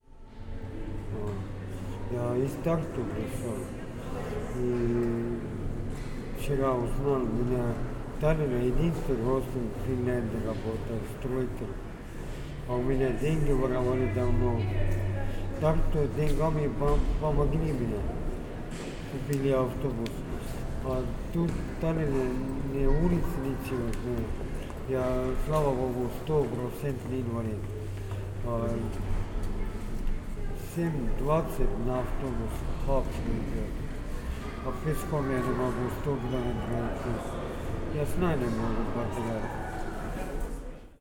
Tallinn, Oleviste kirik
foyer of Oleviste (Olai) church, man talking in russian, sounds of mass in the background